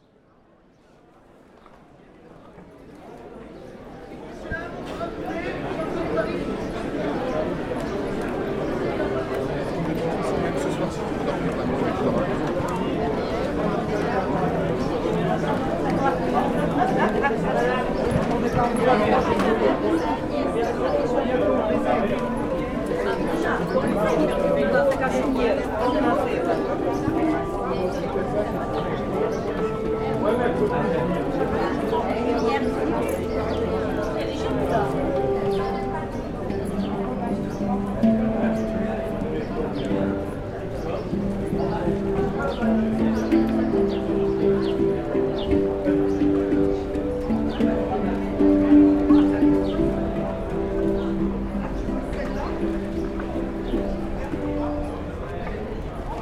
Jour de marché la très animée rue du commerce avec la terrasse du bar du marché, un joueur de hand pan se prépare.